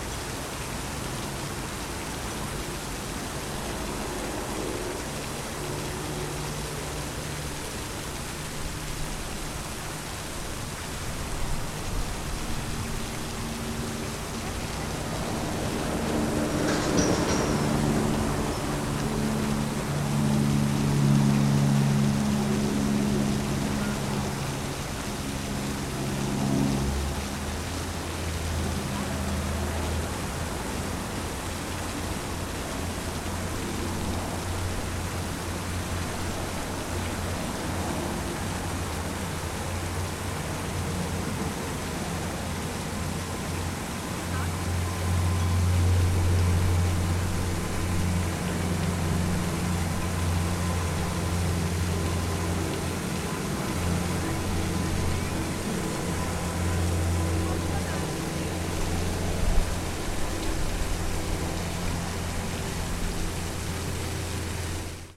enge, am gottfried-keller-denkmal